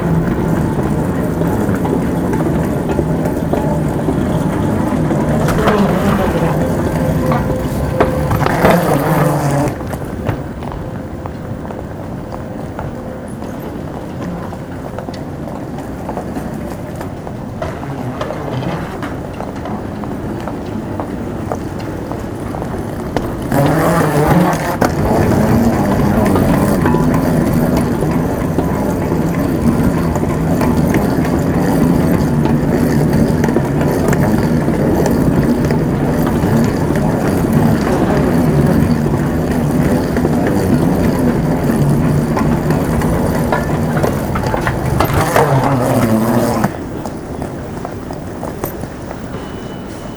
next day, coming back home, again with the trolley on the sliding carpets, same place, opposite direction (this time with the mic closer to the wheels)
Zaventem, Belgium, October 2012